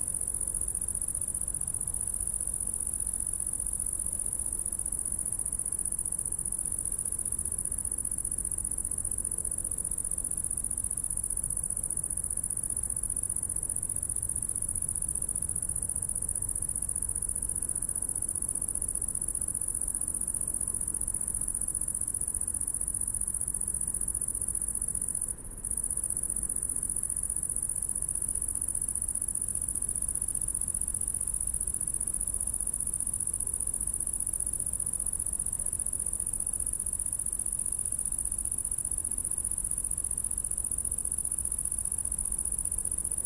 Berlin Buch, Lietzengraben - cricket and high voltage
Berlin Buch, Lietzengraben ditch, summer night, warm and humid, electric crackling from high voltage line and a cricket nearby. Autobahn noise from afar.
(Sony PCM D50, Primo EM172)
Deutschland